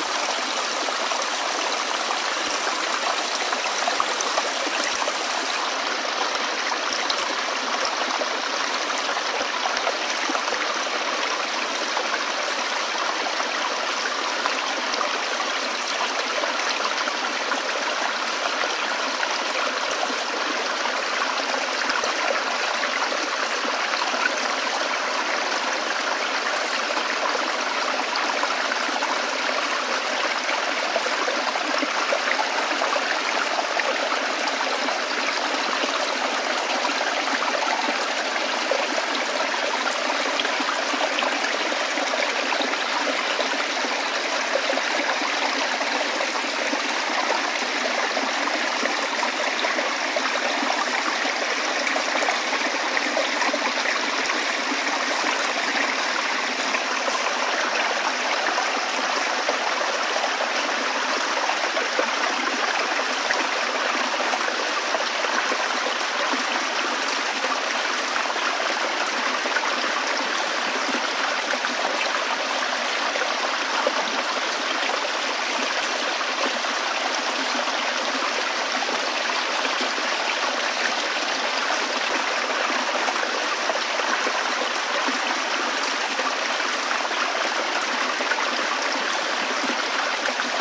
Kneža, Most na Soči, Slovenia - A Bee Stream in the Valley Of Baska Grapa
A tributary of a brook in the Valley Of Baska Grapa
TASCAM DR100-MKIII
Audio Technica 897 Line+Gradient Shotgun Microphone
Walking on the tributary up & down to get some interesting moments, while placing the boom pole in the right direction of the water source.